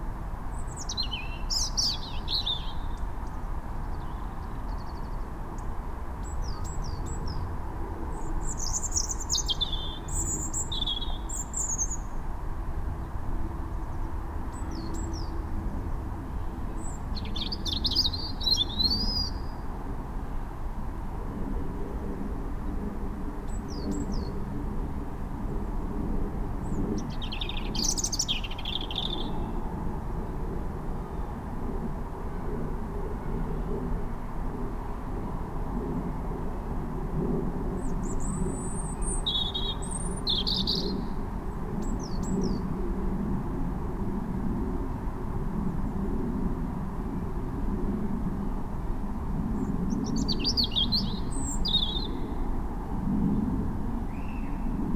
A bend in the canal, Reading, UK - Robin singing in Winter
This is the sound of a Robin singing beside the Kennet & Avon canal in Reading. The water widens out slightly at this point, and the extra space plus the tall red-brick houses on the opposite bank of the canal, create a kind of resonant chamber to amplify the sounds of his song.